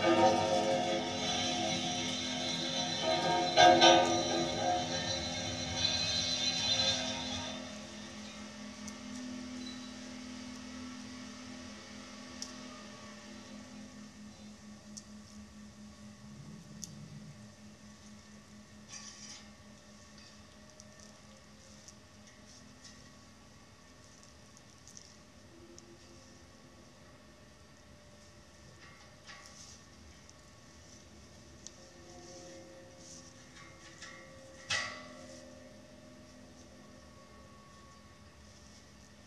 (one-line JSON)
{"title": "metal tram pole Stromovka park", "date": "2009-10-11 15:26:00", "description": "Contact mic placed on a metal tram pole in Stromovka Park. This was made during an excursion for the New Maps of Time sound workshop in October 2009. You can hear the voices of some of the participants talking in the background", "latitude": "50.10", "longitude": "14.43", "altitude": "205", "timezone": "Europe/Prague"}